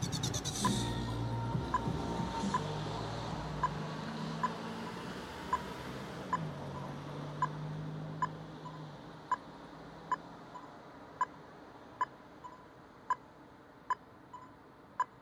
Cnr St Heliers Bay Rd & Long Drive - Atmos